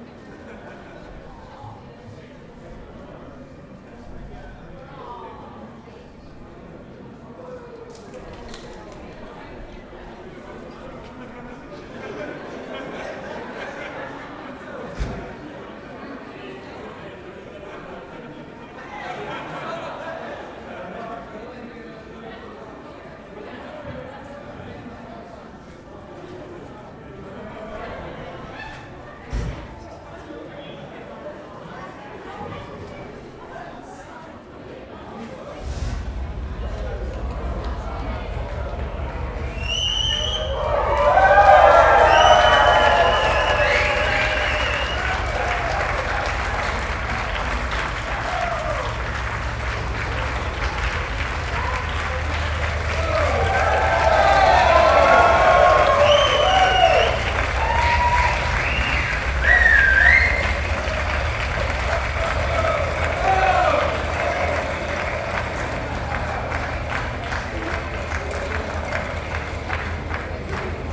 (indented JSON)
{
  "title": "Kortenbos, Centrum, Nederland - Newlyweds drive off.",
  "date": "2012-06-08 14:00:00",
  "description": "Wedding in the Theresia van Ávila church - Friends and family of the newlyweds are waiting outside. The couple comes out of the church and get into a decorated Beetle and drive off.\nZoom H2 recorder with SP-TFB-2 binaural microphones.",
  "latitude": "52.08",
  "longitude": "4.31",
  "altitude": "8",
  "timezone": "Europe/Amsterdam"
}